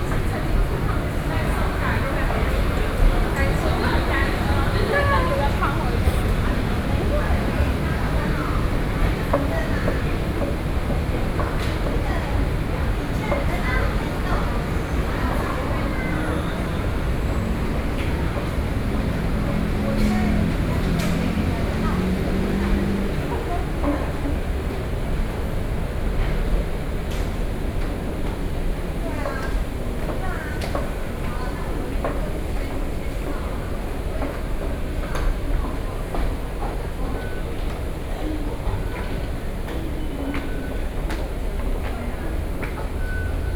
Dingxi Station, New Taipei City - SoundWalk